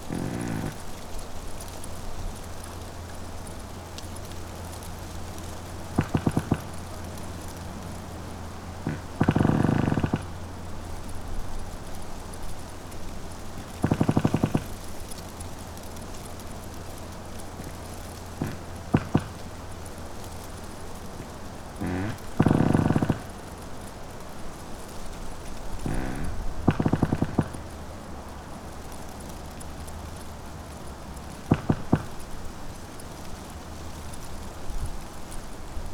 two trees, piramida - creaking trees, dry beech leaves, wind
Maribor, Slovenia